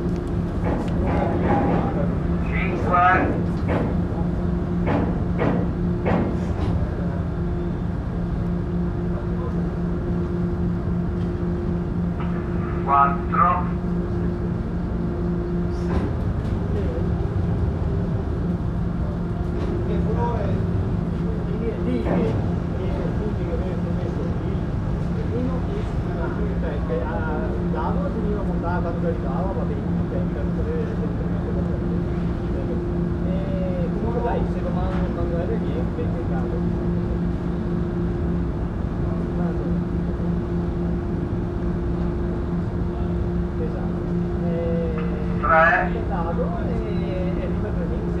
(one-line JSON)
{"date": "2011-09-06 08:55:00", "description": "From Trapani to Favignana Island on the Simone Martini boat.", "latitude": "38.01", "longitude": "12.51", "altitude": "6", "timezone": "Europe/Rome"}